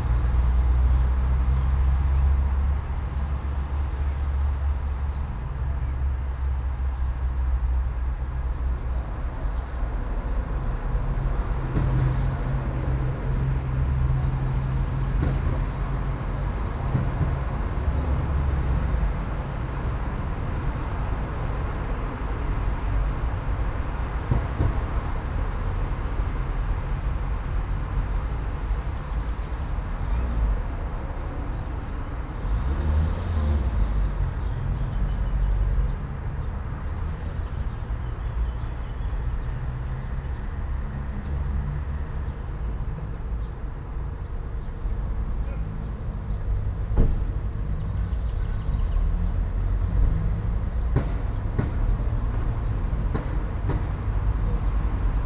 all the mornings of the ... - may 9 2013 thu